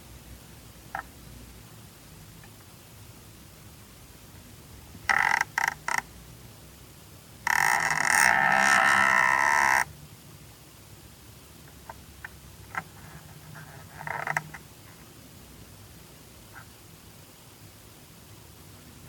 Mont-Saint-Guibert, Belgique - Water meter
A strange water meter. Nobody's using water, but the counter makes roundtrips, a little +1, a little -1, and... +1, -1, +1, -1...... and again again again... This makes curious sounds !
Mont-Saint-Guibert, Belgium, February 2016